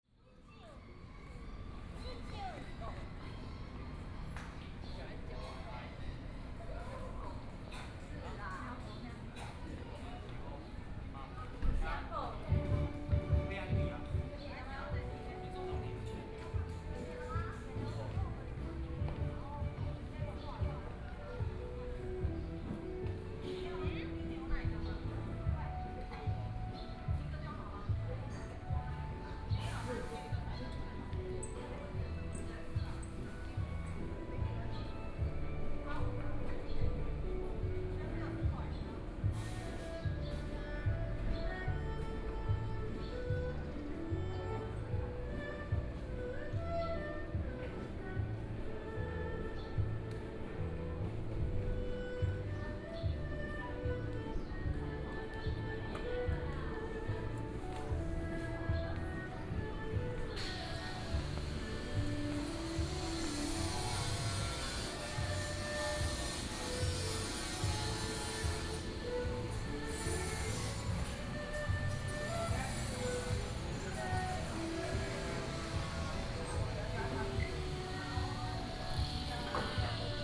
旗津區旗下里, Kaoshiung - in front of the temple plaza
Sitting in front of the temple plaza, Very hot weather, Traffic Sound
Kaohsiung City, Taiwan, 14 May 2014